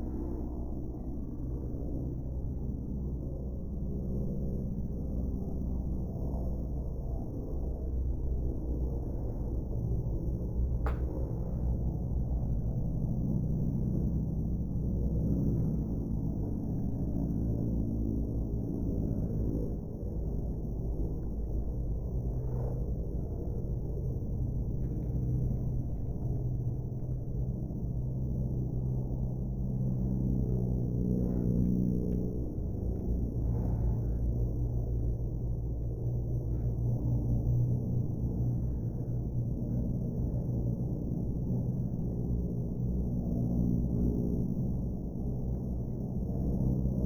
Silverstone Circuit, Towcester, UK - 600cc mbikes slowed down ...
British Motorcycle Grand Prix ... 600cc second practice ... recorder has options to scrub the speed of the track ... these are the bikes at 1/8 x ...
25 August